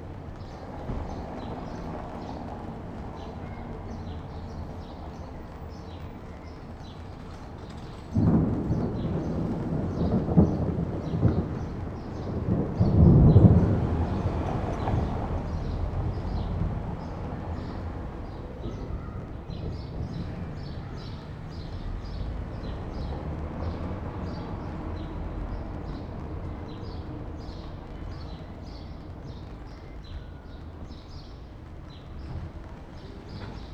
berlin, friedelstraße: vor griechischem restaurant - the city, the country & me: in front of a greek restaurant
in front of the greek restaurant "taverna odysseus", pedestrians, traffic noise and a upcoming thunderstorm
the city, the country & me: june 6, 2011
99 facets of rain
Berlin, Germany, 6 June 2011, 16:21